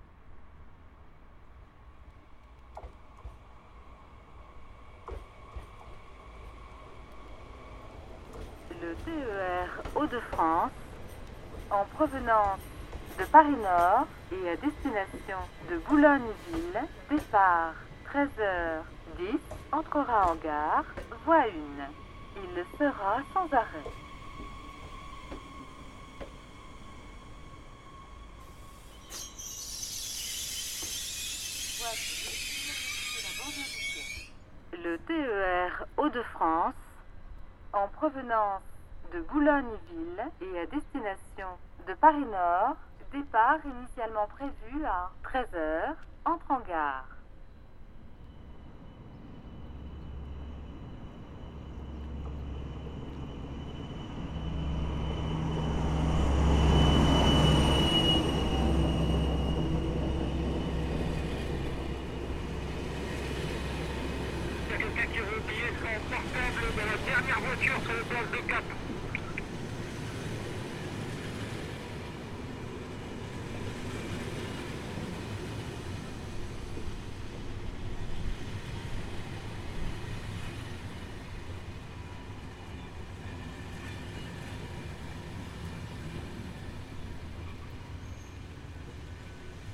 Gare Etaples Le Touquet, Pl. de la Gare, Étaples, France - Gare ferroviaire d'étapes-Le Touquet
Gare ferroviaire d'Étaples - Le-Touquet
ambiance sur le quai - départ et arrivée des trains et annonces